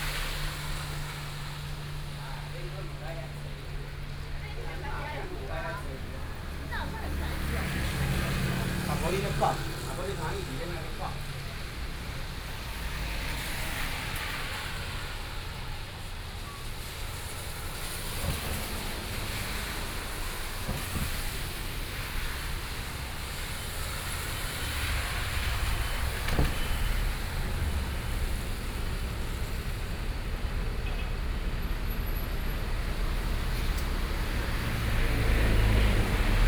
Muzha, Taipei - Bus stop
in front of the Bus stop, Sony PCM D50 + Soundman OKM II
Taipei City, Taiwan